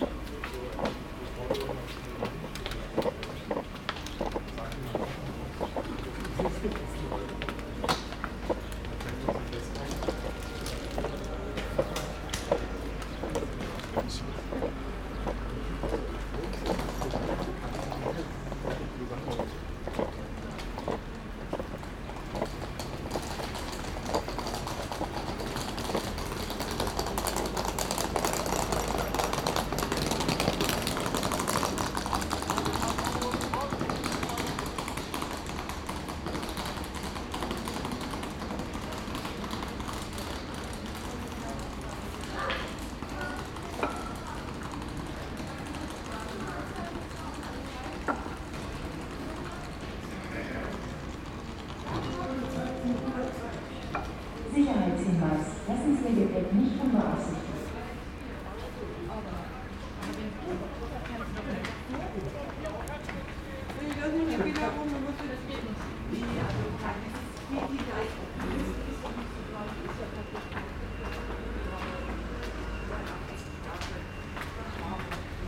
*Listen with headphones for best acoustic results.
A short acoustic documentation of tones of spaces at Hauptbahnhof Weimar (Main Station of Weimar)
First 35 seconds: ambience outside, 36 to 2:25: ambience inside hall, 2:26 to end: ambience of platform 3.
Recording and monitoring gear: Zoom F4 Field Recorder, LOM MikroUsi Pro, Beyerdynamic DT 770 PRO/ DT 1990 PRO.